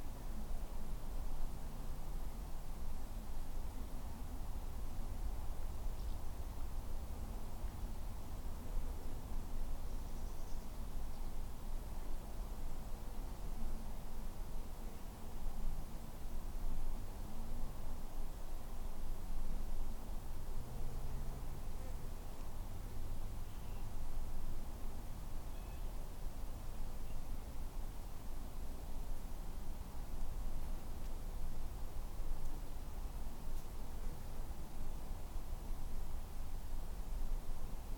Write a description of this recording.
This is the sound in a quiet corner of Port Meadow; one of the many places in Oxford which is extremely rural and where county life meets city life. Horses and cattle graze on the meadow; folk enjoy swimming and boating on the Thames; many people enjoy walking on the green; and large trains pass on the rail line directly next to it. In this recording I was trying to capture something of the ambience of this place; a very simple recording made with EDIROL R-09 in the grass underneath a tree.